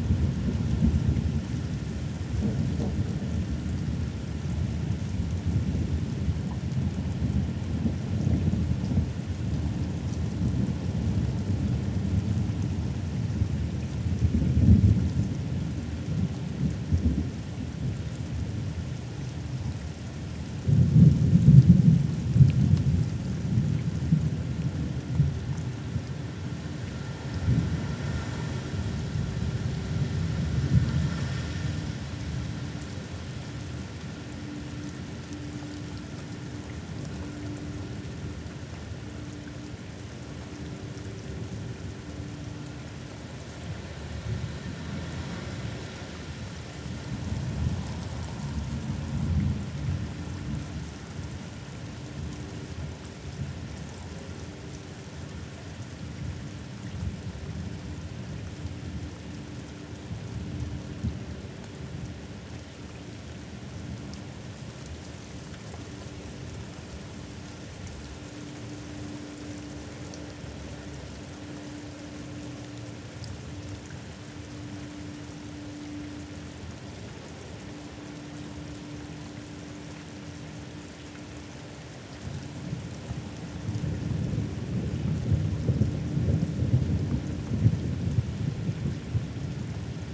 We had been having an intense family discussion, and the mood in the house was a little oppressive. Suddenly the sense that a mighty storm was breaking outside replaced the heavy mood with one of excitement. Forgetting all about whatever we had been discussing, we ran to the door and stood in the doorway watching fork lightning driving down through the dark sky, and listening to thunder rumble overhead. It was incredibly loud and bright, and I had the sense that the whole sky was cracking. When it first began it was very explosive and loud, but my batteries were dead and the only way to create recordings was by plugging the recorder into the mains, which didn't feel like such a great option, but how could I miss the opportunity to record this amazing storm? I strapped my Naint X-X microphones onto the latch of the opened window and plugged them into the FOSTEX FR-2LE. Then I lay on the floor in the dark while everyone else slept, wondering when the storm would die down.